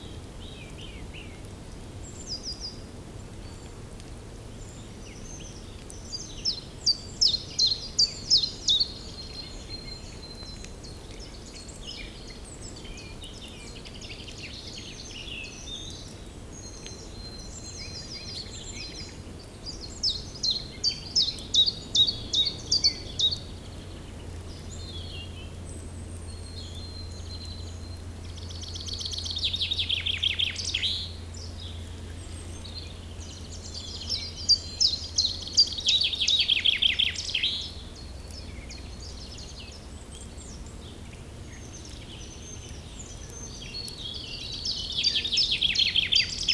Genappe, Belgique - Common Chiffchaff
A very great sunny sunday, song of the common chiffchaff in the big pines.
Genappe, Belgium